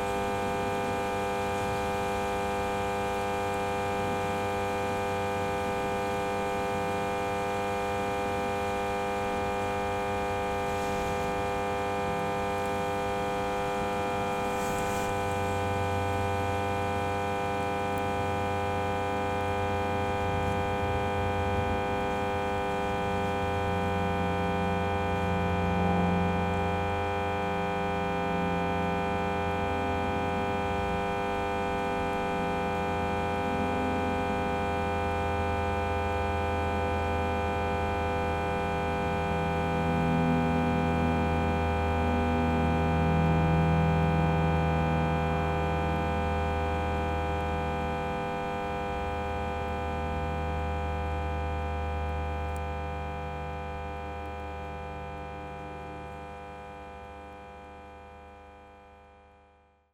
Eglancourt, France - Small power station
Into a small village, there's a power station placed into the bus stop. I was heckled by the by noise of a so small machine. What a nuisance...